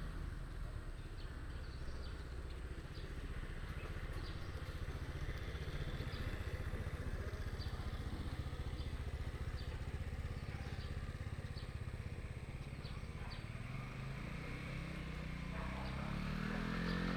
歷坵部落, 金峰鄉金崙林道 - In the streets of Aboriginal tribes
In the streets of Aboriginal tribes, Bird cry, traffic sound, Dog barking
Taitung County, Jinfeng Township, 金崙林道, 1 April 2018